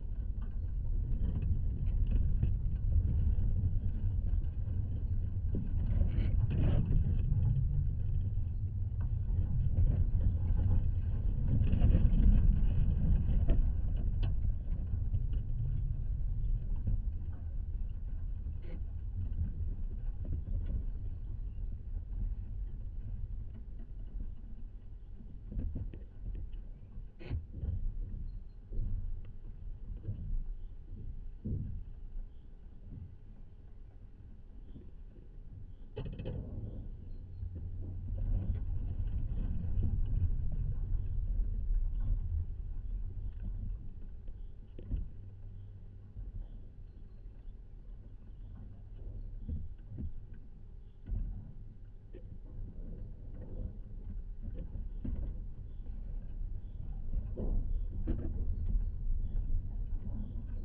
contact microphones on abandoned tennis court's fence
Atkočiškės, Lithuania, July 2017